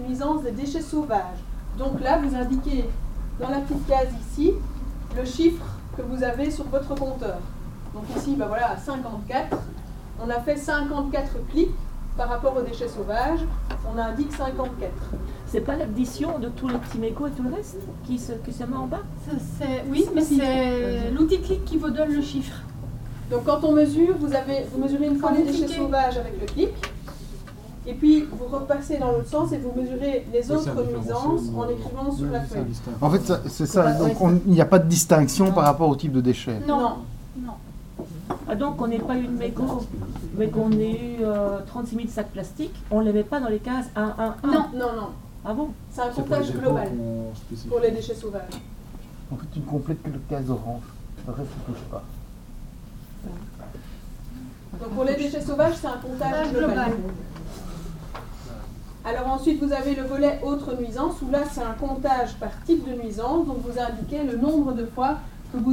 Mont-Saint-Guibert, Belgique - Formation at the IBW center
A training about the "Clic-4-Wapp" project, from the Minister Carlo Di Antonio. The aim is to evaluate the state of dirt of the Walloon municipalities. The teacher explains how to count dirts in the streets, where and why. The teacher is Lorraine Guilleaume.
25 February, 11:00